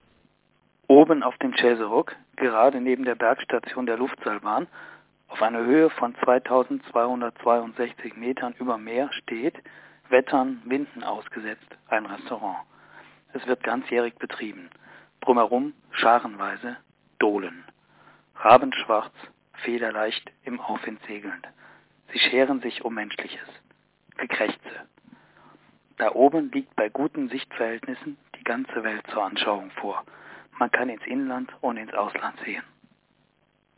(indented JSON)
{
  "title": "Auf dem Chäserugg - Der Wettermacher, Peter Weber 1993",
  "latitude": "47.19",
  "longitude": "9.31",
  "altitude": "910",
  "timezone": "GMT+1"
}